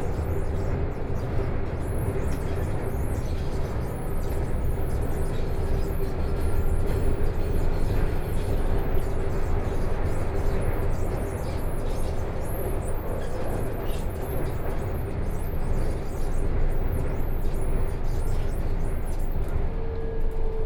Hongkou District, Shanghai - Line 8(Shanghai metro)
from Siping Road station To Hongkou Football Stadium station, Binaural recording, Zoom H6+ Soundman OKM II